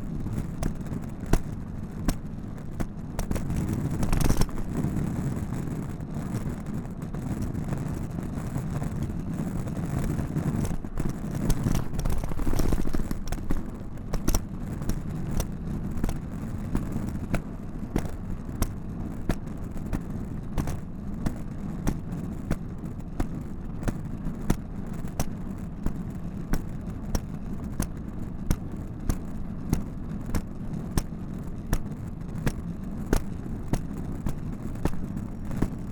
{"title": "Georgia Avenue North West Suite, Washington, DC, Washington, DC, USA - USA Luggage Bag Drag 4", "date": "2019-09-22 15:15:00", "description": "Recorded as part of the 'Put The Needle On The Record' project by Laurence Colbert in 2019.", "latitude": "38.92", "longitude": "-77.02", "altitude": "33", "timezone": "America/New_York"}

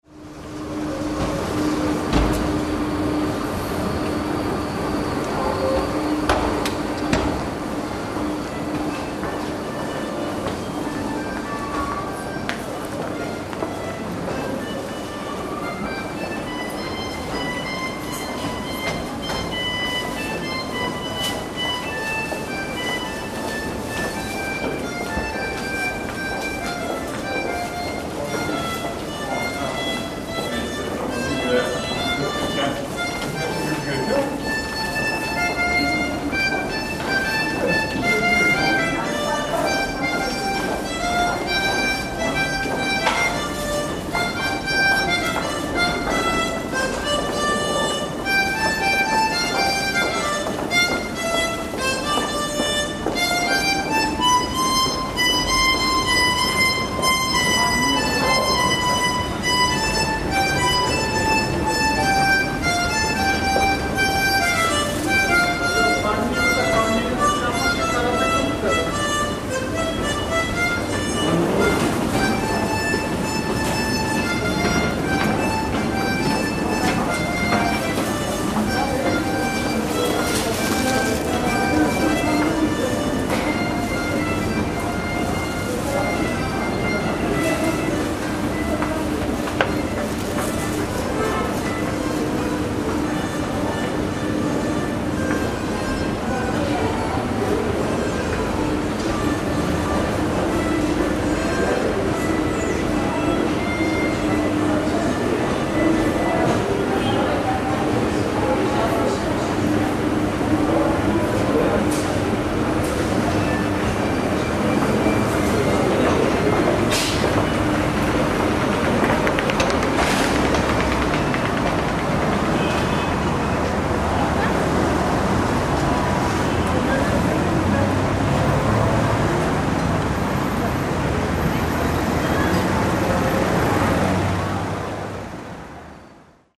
{"title": "Levent metro station, a week of transit, monday morning - Levent metro station, a week of transit, wednesday morning", "date": "2010-09-29 09:46:00", "description": "Loosing grasp on calendarian certainties, not even being sure whether the sun is shining or not, but as I hear the little girl is playing her harmonica, I know that it must be morning hours. Urban measure, time of transit.", "latitude": "41.08", "longitude": "29.01", "altitude": "143", "timezone": "Turkey"}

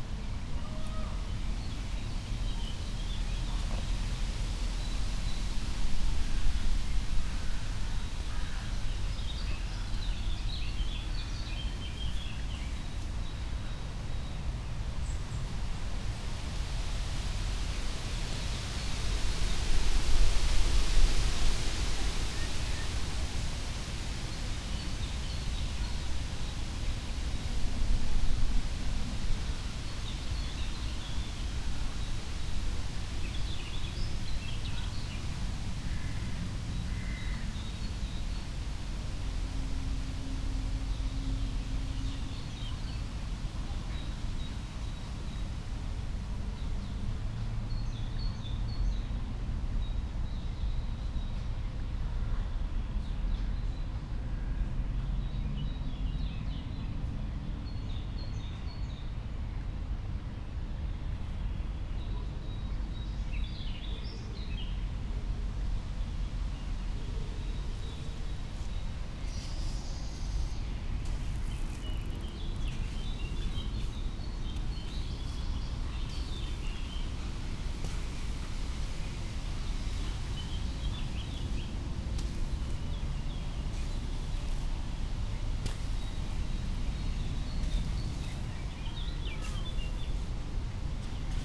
{"title": "Mariborski otok, river Drava, tiny sand bay under old trees - wet dunes, low waters, train", "date": "2015-05-18 19:31:00", "description": "slowly walking the dunes, train passes behind the river", "latitude": "46.57", "longitude": "15.61", "altitude": "260", "timezone": "Europe/Ljubljana"}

